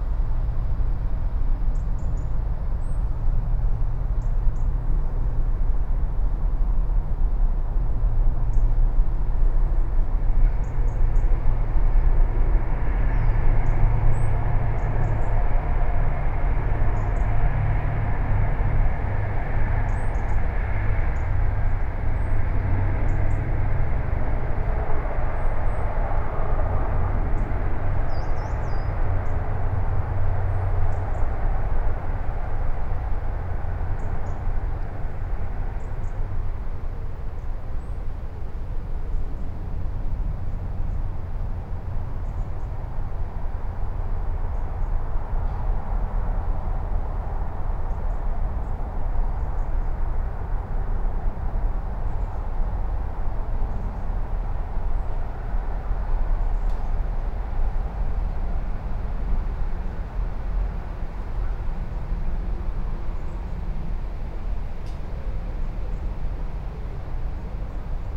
Toll House, High St, Whitchurch-on-Thames, Reading, UK - St Marys Churchyard, Whitchurch-on-Thames
Birdsong, wind in the surrounding trees, the rumble of traffic crossing the nearby toll bridge, trains passing along the mainline to London, aircraft and a group of ramblers (Spaced pair of Sennheiser 8020s on a SD MixPre6).